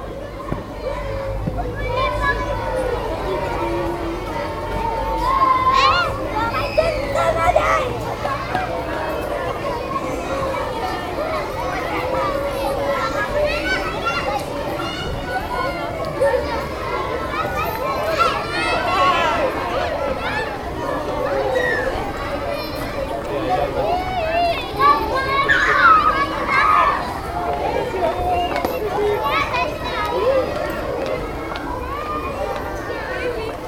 Court-St.-Étienne, Belgique - Wisterzée school
Children playing in the Wisterzée school.